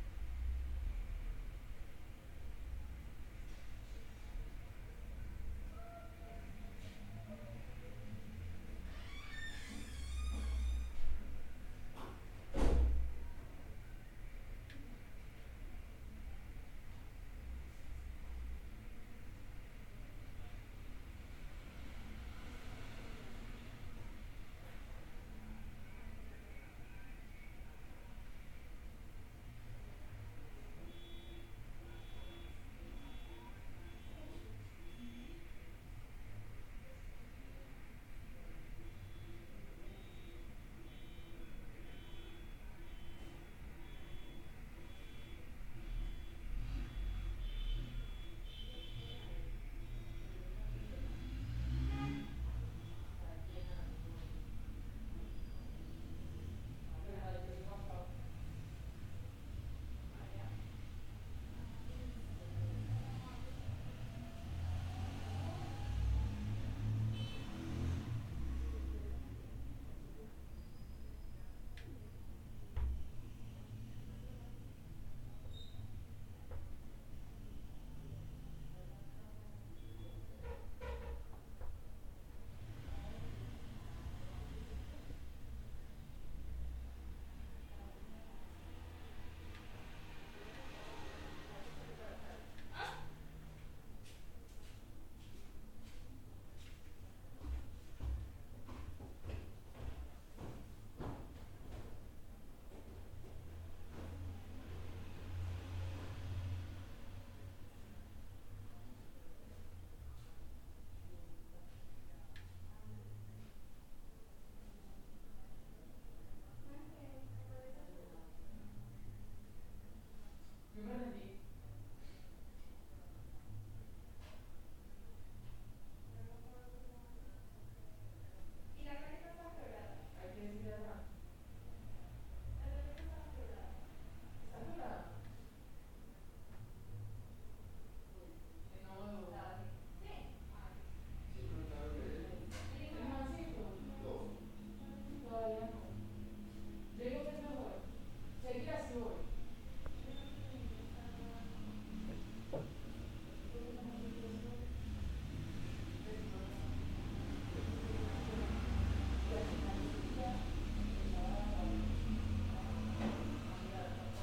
Cl., Medellín, Antioquia, Colombia - Ambiente casa
Casa en Belén la Nubia, Medellín, Antioquia, Colombia.
Sonido tónico: vehículos transitando, voces
Señal sonora: movimiento de objetos, puerta abriéndose, puerta cerrándose, alarma de carro, bocina
Valle de Aburrá, Antioquia, Colombia, October 2021